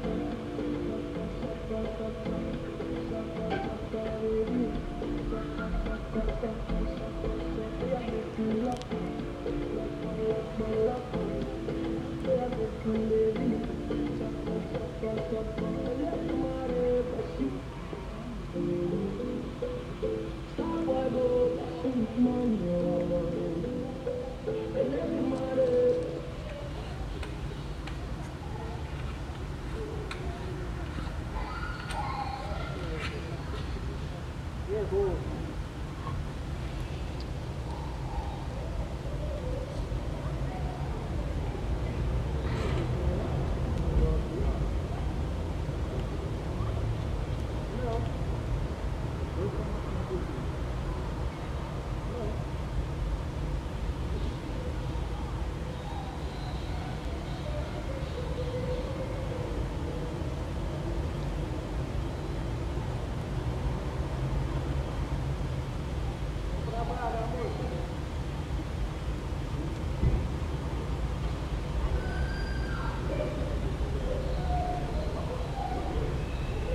Vila Manuel Bernardo, Lisbon - Quarantine Pink Full Moon @ Miradouro da Penha de França
Midnight music playing at Miradouro da Penha de França as heard from down the hill, accompanied by fridge hum and dogs howling at the pink full moon. Recorded with binaural microphones onto a Sony PCM-D50, under State of Emergency lockdown measures, in Lisbon, Portugal.